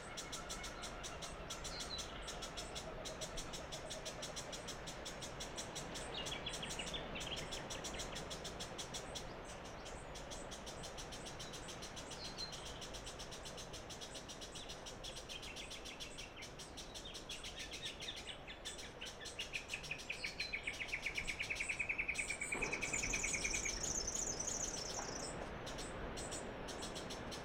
Royal National Park, NSW, Australia - Birdsong on the coast, first light
Recorded with an AT BP4025 into a Tascam DR-680.
BixPower MP100 used as an external battery.